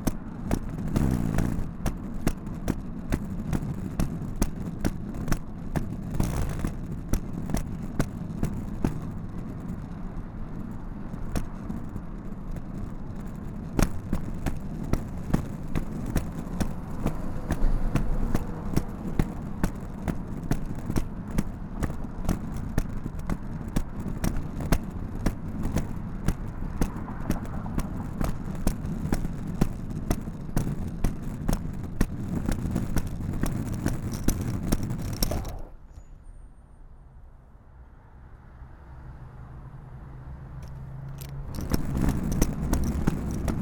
Georgia Avenue North West Suite, Washington, DC, Washington, DC, USA - USA Luggage Bag Drag 4
Recorded as part of the 'Put The Needle On The Record' project by Laurence Colbert in 2019.